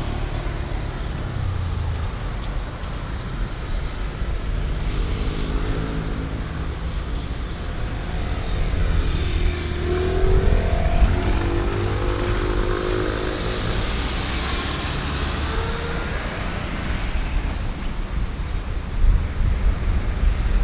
{
  "title": "Jung Li City, Taoyuan - Level crossing",
  "date": "2012-06-11 20:17:00",
  "description": "Level crossing, Train traveling through, Sony PCM D50 + Soundman OKM II",
  "latitude": "24.97",
  "longitude": "121.26",
  "altitude": "124",
  "timezone": "Asia/Taipei"
}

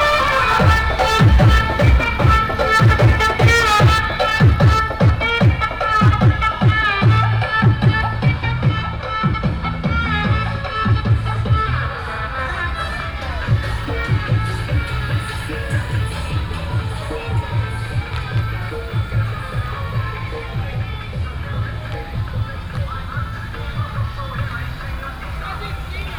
{
  "title": "中豐公路, Guanxi Township - Temple festival festivals",
  "date": "2017-09-15 18:00:00",
  "description": "Temple festival festivals, Fireworks sound, traffic sound, Binaural recordings, Sony PCM D100+ Soundman OKM II",
  "latitude": "24.79",
  "longitude": "121.19",
  "altitude": "157",
  "timezone": "Asia/Taipei"
}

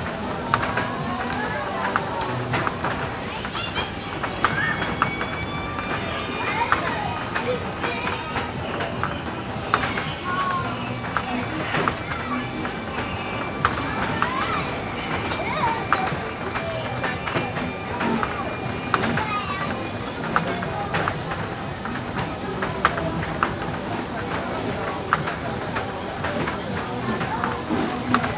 {
  "title": "Place Victor Hugo, Saint-Denis, France - Roundabout Outside La Basilique de St Denis",
  "date": "2019-05-25 11:15:00",
  "description": "This recording is one of a series of recording, mapping the changing soundscape around St Denis (Recorded with the on-board microphones of a Tascam DR-40).",
  "latitude": "48.94",
  "longitude": "2.36",
  "altitude": "34",
  "timezone": "GMT+1"
}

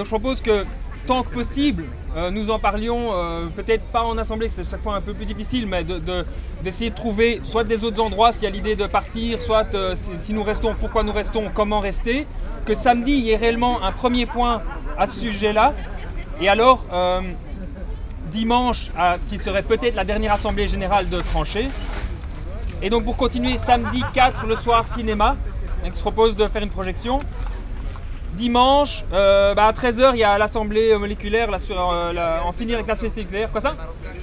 {
  "date": "2011-06-01 19:11:00",
  "description": "Brussels, Place de Moscou, Real Democracy Now Camp, Programm.",
  "latitude": "50.83",
  "longitude": "4.35",
  "altitude": "43",
  "timezone": "Europe/Brussels"
}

